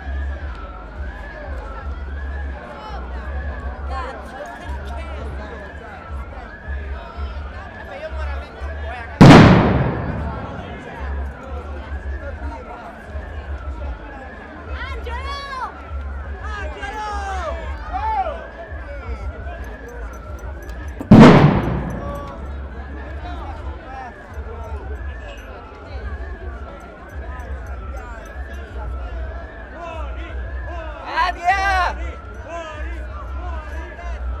via Labicana
Rome Riot
The explosions are provoked by demostrants' homemade bombs
Rome, Italy, October 2011